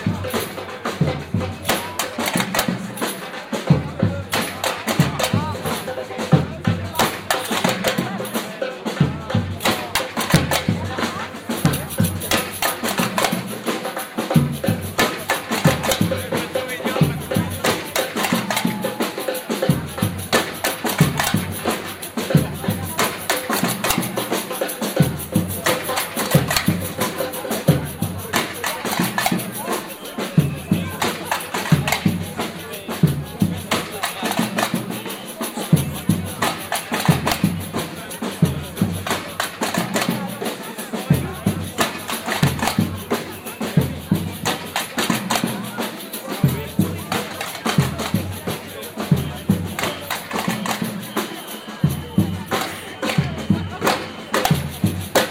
Poitiers in front of the former Cinema - Demonstration against Privatisation
The mayor want to privatise the former theater and now movie theater - shops are the new solution. A demonstration with a samba group has gathered.
Poitiers, France